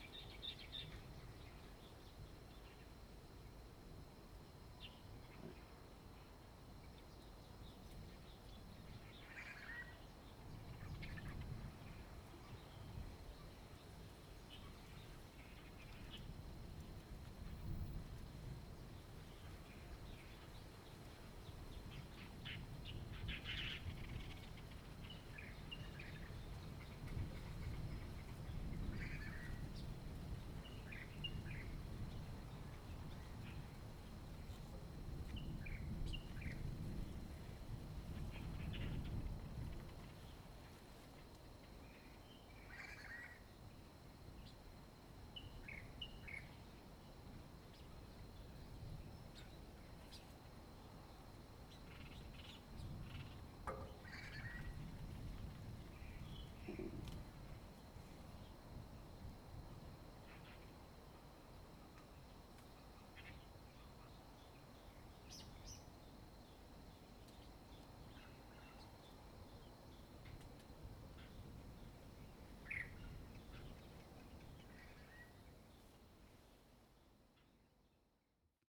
Birds sound, traffic sound, Beside the road
Zoom H2n MS+XY
Hengchun Township, 台26線200號